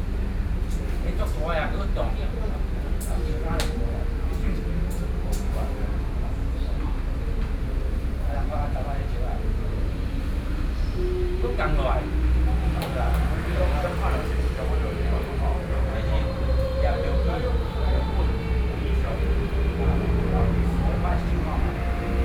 Beitou Park, Taipei City - Night in the park
Old people playing chess, Behind the traffic noise, Sony PCM D50 + Soundman OKM II